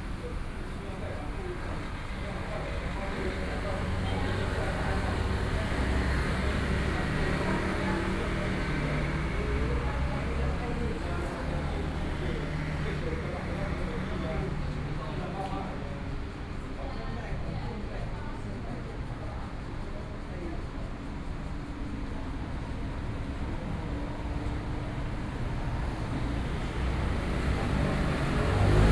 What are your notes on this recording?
Group of elderly people in the temple Chat, Traffic Noise, Sony PCM D50 + Soundman OKM II